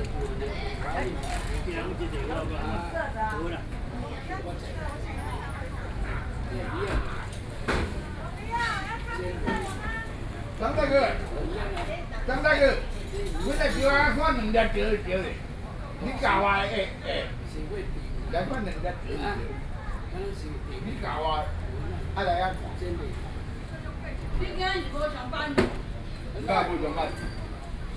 No., Section, Míngdēng Rd, New Taipei City - drinking